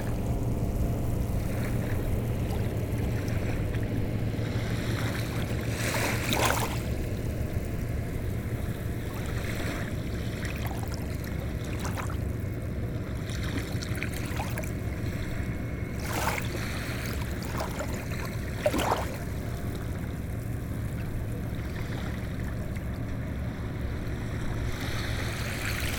LAiguillon-sur-Mer, France - The sea at pointe dArçay
Recording of the sea at the very end of the Pointe d'Arçay, a sandy jetty. At the backyard, the fishing vessels drone.